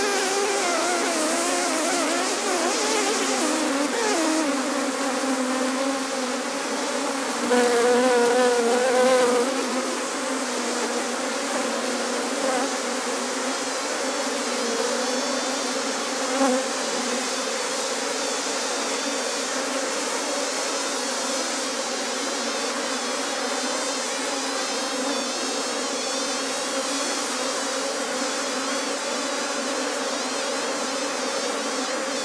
SBG, Apiculturat - Enjambre en acción
Apertura de una de las colmenas. El enjambre sale con rapidez, alertado por tan repentina visita.
St Bartomeu del Grau, Spain, 4 August 2011, 17:45